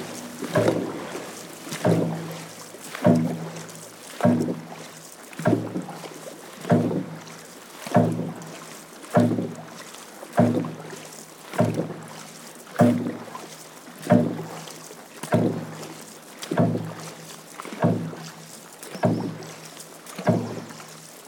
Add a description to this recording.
As part of the Sounding Lines Art Project we were privileged to be invited to go out paddling with the Suir Dragon Paddlers - CRC is a dragon boat team of breast cancer survivors their friends and families of all ages and abilities, set up in February 2013. Amazing for us to experience the team work and the beautiful sounds and the rhythms created by the paddlers.